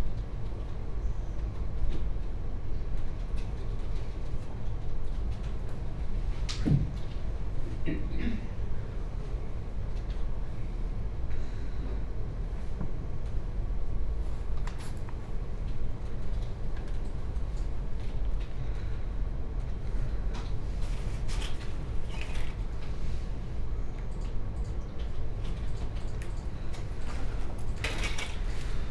Oxford Brookes University, Gypsy Lane, Oxford - Brookes Library Quiet Space
Short 10-minute meditation in the 'Silent Space' of the library at Oxford Brookes University (spaced pair of Sennheiser 8020s with SD MixPre6).